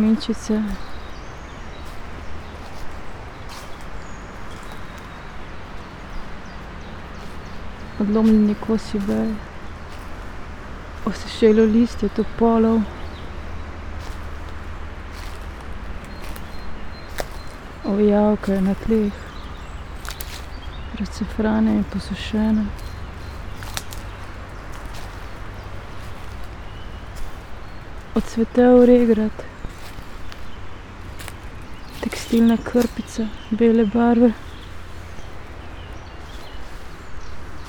small island, river Drava, Melje - river flow, steps, words